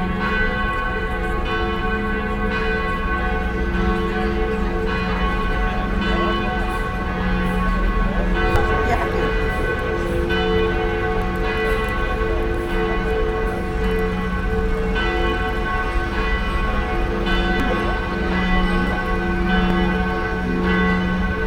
cologne, main station, vorplatz, mittagsglocken
soundmap nrw - social ambiences and topographic field recordings